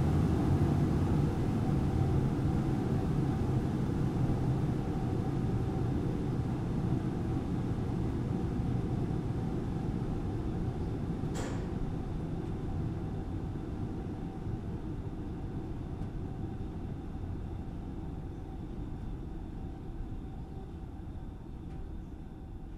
monheim, klappertorstr, fischräucherei
hochfahren der lüftung im langen kamin
morgens im frühjahr 07
soundmap nrw - social ambiences - sound in public spaces - in & outdoor nearfield recordings